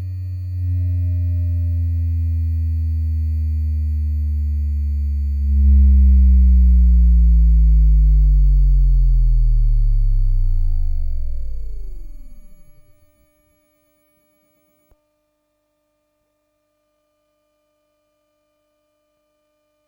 Traundorfer Str., Linz, Austria - Tram electromagnetic signals for 1 stop
Humans are unable to perceive electromagnetic signals at audio frequencies, yet we are surrounded by them constantly. Anything electrical or electronics makes them. However it is possible to listen to them using cheap devices sold as telephone pick-ups. This recording uses one to track the electromagnetic signals created by a tram as it travels from Hillerstrasse to Kaserne.